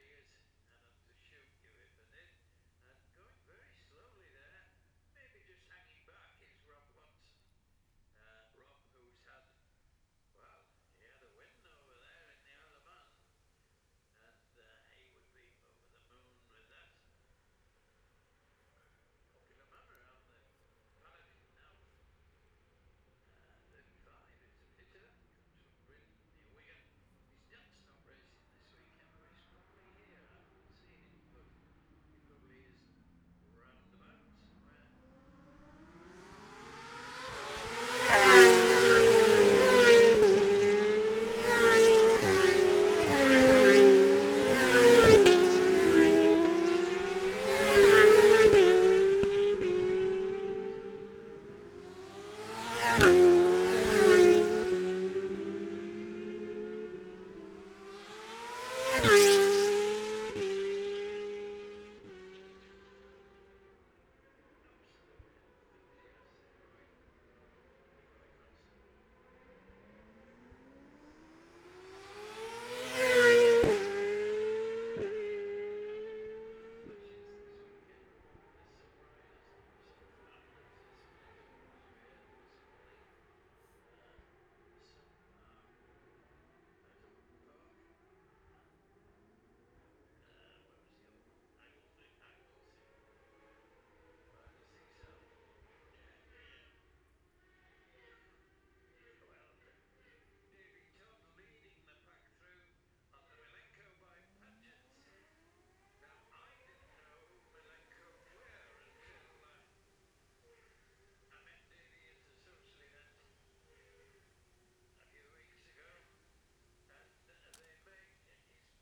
Jacksons Ln, Scarborough, UK - gold cup 2022 ... 600 practice ...
the steve henshaw gold cup 2022 ... 600 group two practice ... dpa 4060s clipped to bag to zoom h5 ...